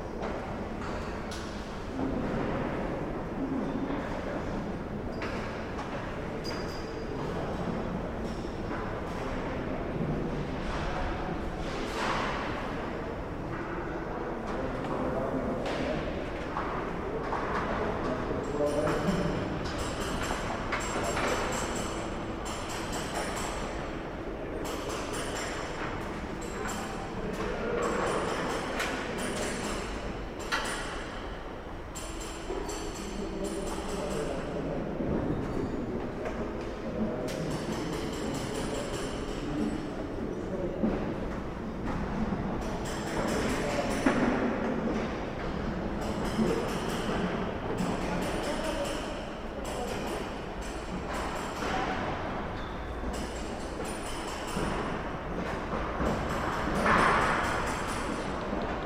cathedral renovation, Torun Poland
sounds of the renovation work inside the cathedral